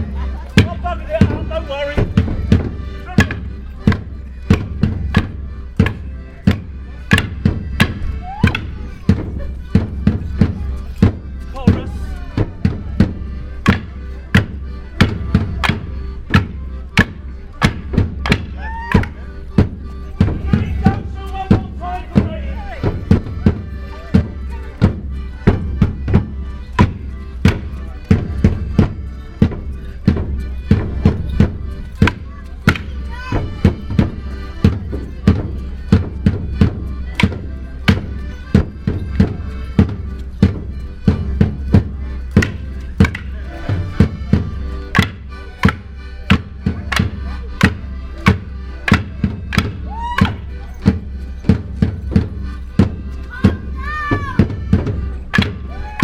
St. Osyth Bosing Day Morris Dancers